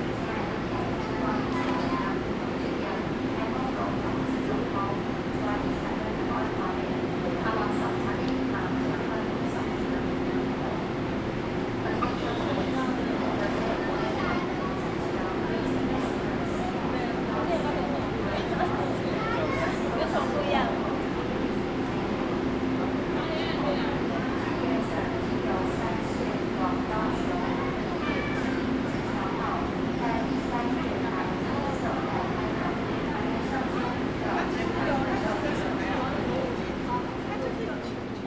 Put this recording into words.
In the station platform, Zoom H2n MS+XY